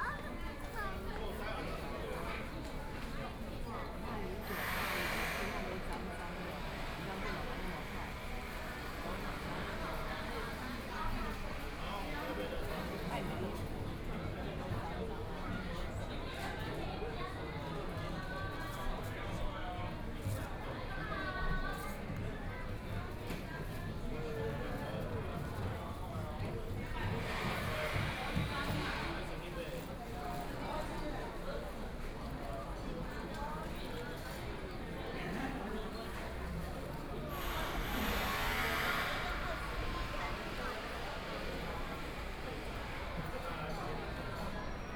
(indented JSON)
{
  "title": "Hualien Station, Taiwan - Station hall",
  "date": "2014-01-18 14:24:00",
  "description": "in the Station hall, Binaural recordings, Zoom H4n+ Soundman OKM II",
  "latitude": "23.99",
  "longitude": "121.60",
  "timezone": "Asia/Taipei"
}